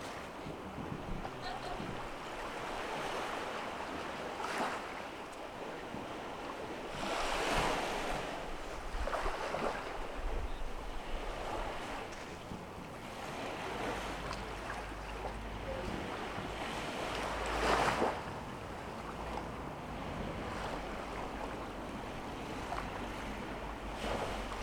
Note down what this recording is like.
Presquîle du Croisic, Loire Atlantique, Plage des Sables Menus, Minuit, Marée montante, Feu de camp dans une cric (à gauche)